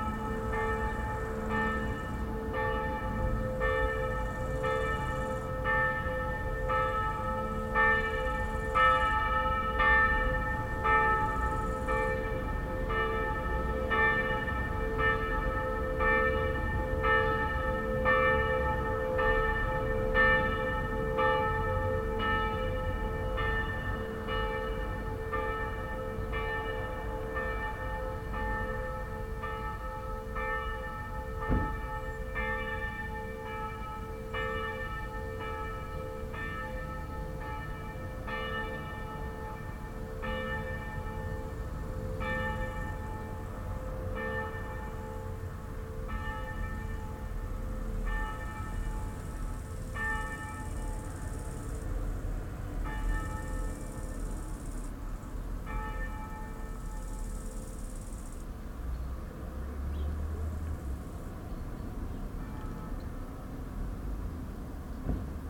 Parking de l'école, Chindrieux, France - Midi en été
Sur le parking de l'école de Chindrieux par une belle journée d'été, sonnerie du clocher, quelques criquets et oiseaux, le bruit de la circulation sur la RD 991 qui traverse le village.